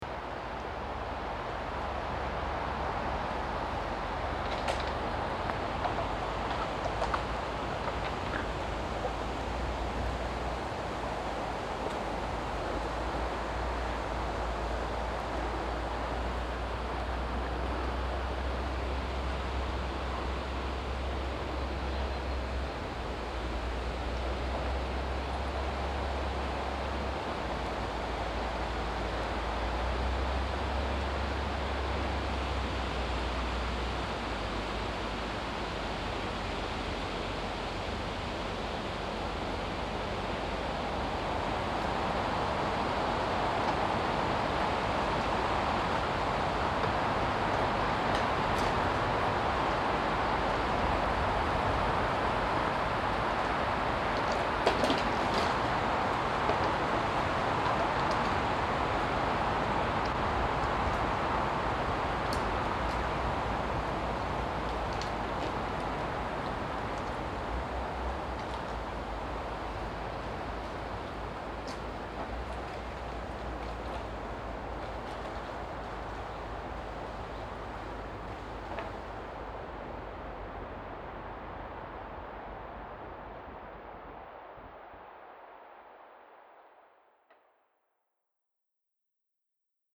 Ulflingen, Luxemburg - Ulflingen, conifer forest, trees in the wind
An einem windigen Sommer Spätnachmittag in einem Nadelwald. der Klang der hohen, dünnen Bäume die sich im Wind bewegen und gegeneinander berühren.
On a windy summer afternoon inside a conifer forest. The sound of the high and thin trees moving and touching each other in the wind.
Troisvierges, Luxembourg